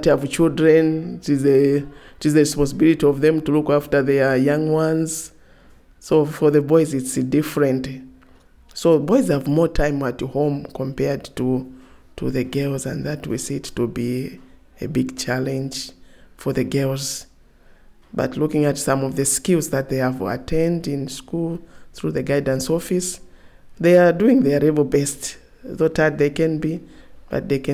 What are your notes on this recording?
Over the 50 years since it was established, the school didn’t have one female head teacher… Mrs Chilowana Senior Teacher tells us. In the main part of the interview, we ask Mrs Chilowana to describe for us the social expectations on girl and boy children in the rural community... Mrs Chilowana has been in the teaching services for 25 year; the past 10 years as a Senior Teacher at Sinazongwe Primary/ Secondary School. the entire interview can be found here: